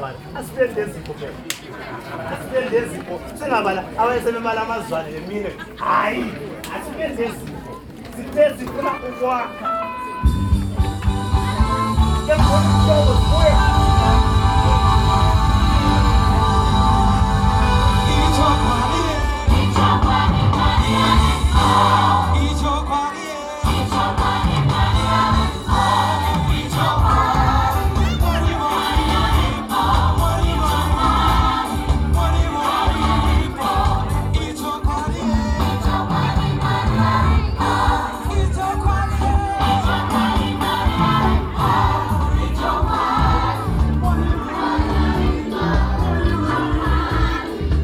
Pumula, Bulawayo, Zimbabwe - a praise poem for the new couple...
… a praise poem performed by a woman poet, and a thanks-giving hymn by the whole community while a long line of guests is getting in place to offer their congratulations and gifts to the new couple; all this, framed by the announcements of the event’s master of ceremony…
21 December 2013, 15:35